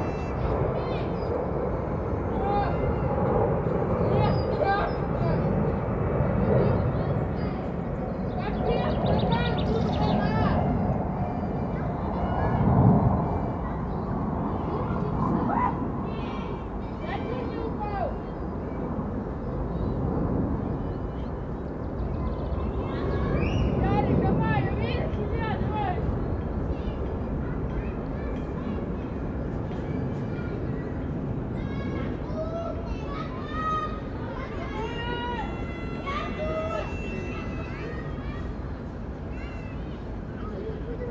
Children's adventure playground, Vltavanů, Praha, Czechia - Children's adventure playground
This playground has some rather high rope walkways leading to steep slides back to the ground, so sitting nearby the sounds of children exploring the possibilities regularly come from above your head. It was a cold and stormy day so not many were here, but they were obviously enjoying it. The right mix of excitement and scariness.